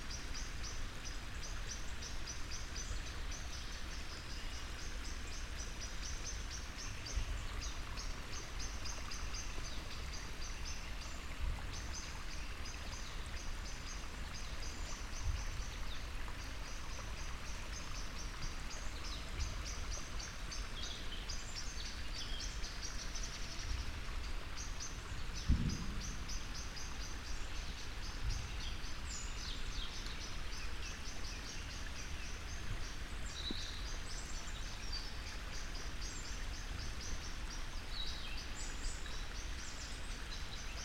dale, Piramida, Slovenia - spring waters
spring sounds ... stream, distant carbide firing, birds